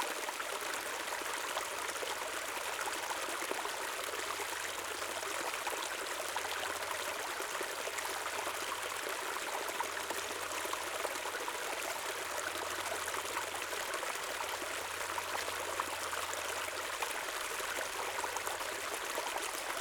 Water Stream between H011 &, Hong Kong Trail Section, The Peak, Hong Kong - Water Stream between H011 & H012
A water stream with a wooden bride located between H011 and H012, running towards Pok Fu Lam Reservoir. You can hear the clear water running sound from the close miked recording.
位於標距柱H011和H012中間流向薄扶林水塘的石澗，有一座小木橋。你可以聽到近距錄音下清晰的流水聲。
#Water, #Stream, #Bird, #Plane